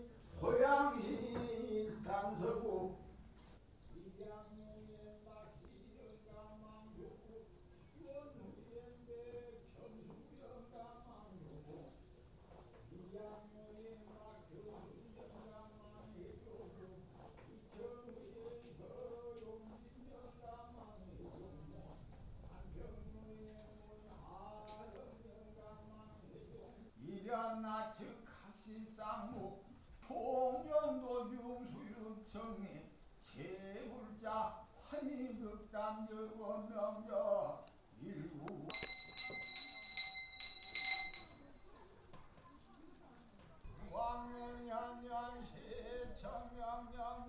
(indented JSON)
{
  "title": "실상사 Shilsang Temple - 실상사",
  "date": "2017-05-05 11:00:00",
  "latitude": "35.64",
  "longitude": "126.58",
  "altitude": "74",
  "timezone": "Asia/Seoul"
}